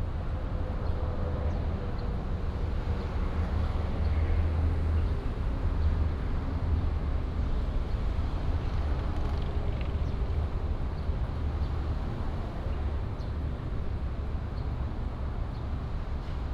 Next to the highway, sound of the birds, Traffic sound
Changhua County, Taiwan, 6 April 2017